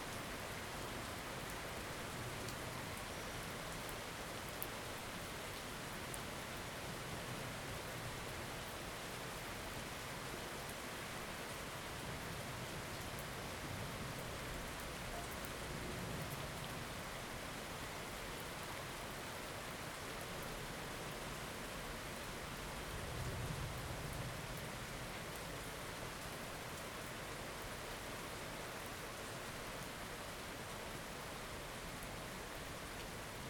São João, Portugal - Thunderstorm, Lisbon